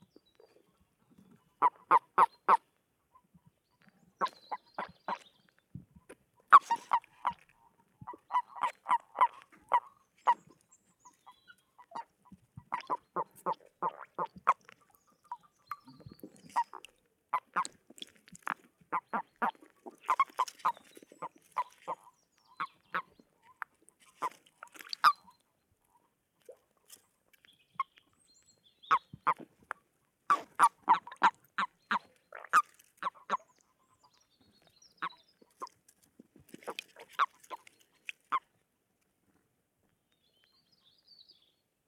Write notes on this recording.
close-up recording of matings frogs